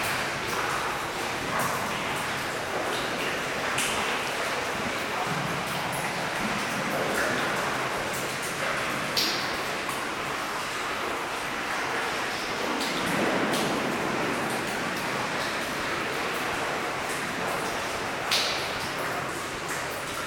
Exploring very deeply a flooded mine, in a difficult to walk place. Reverb is quite important.